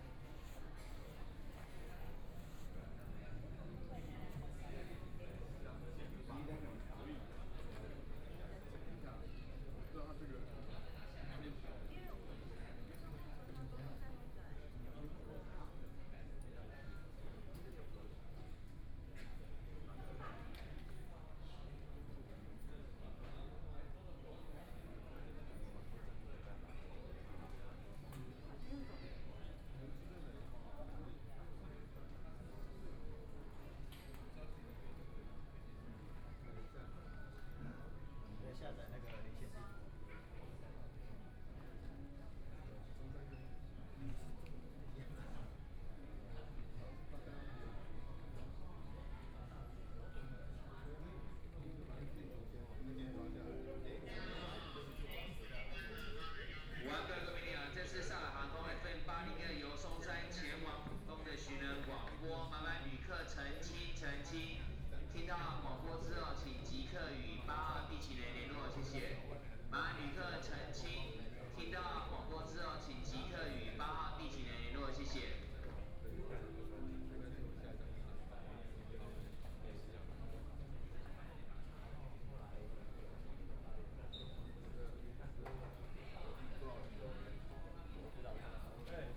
In the airport departure lounge, Waiting for a flight passengers, Zoom H6 + Soundman OKM II
Taipei City, Taiwan